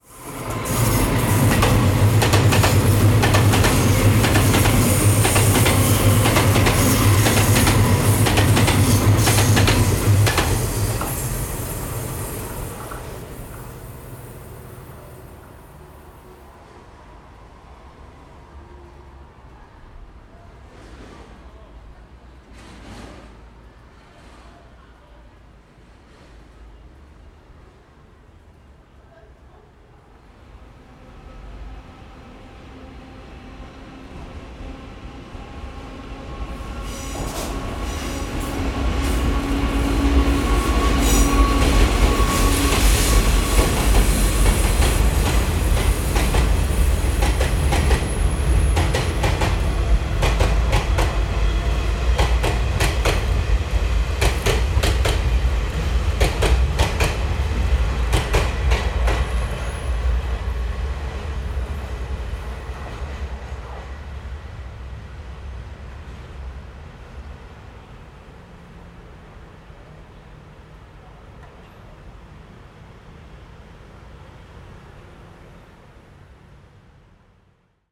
Traveling by train
Zoom H4n XY+Rode NT4
29 November, ~14:00